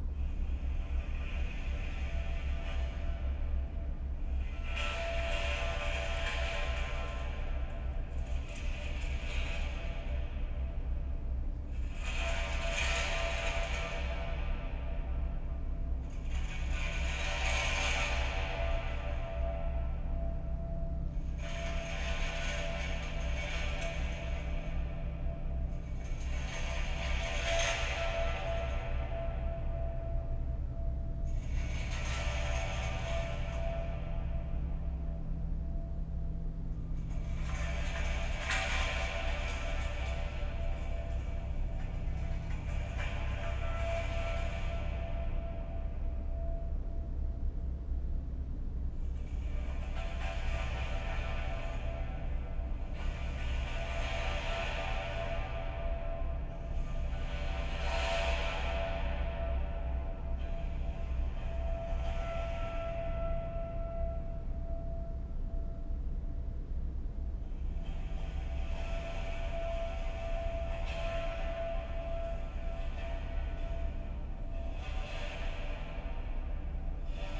Beyoğlu/Istanbul Province, Turkey - metal drawing
scraping floor of warehouse with long metal beam.
ST250 mic, Dat recorder
İstanbul, Marmara Bölgesi, Türkiye, 9 May 2007